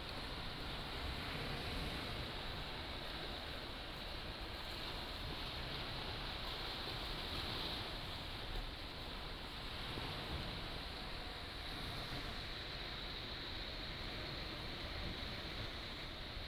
芹壁村, Beigan Township - On the rocky shore
On the rocky shore, Sound of the waves
15 October 2014, 12:19